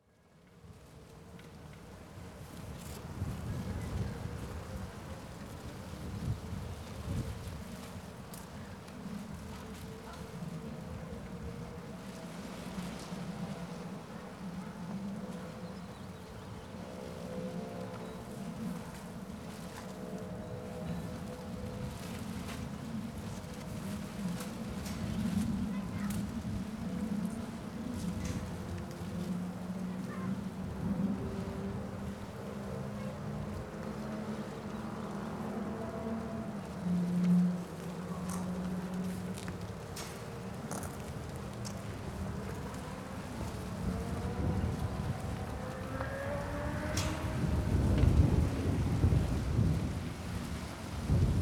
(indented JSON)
{
  "title": "Srem, Zwirowa Raod - plastic flaps",
  "date": "2014-04-20 15:16:00",
  "description": "recorded in front of a construction site of an apartment building, which is still in raw state. windows already fitted yet still covered in plastic wrap. the plastic is teared up and pieces of wrap flutter in the strong wind. the fence of the construction site rattles in the wind. speeding motor bike roars over the city.",
  "latitude": "52.09",
  "longitude": "17.00",
  "altitude": "80",
  "timezone": "Europe/Warsaw"
}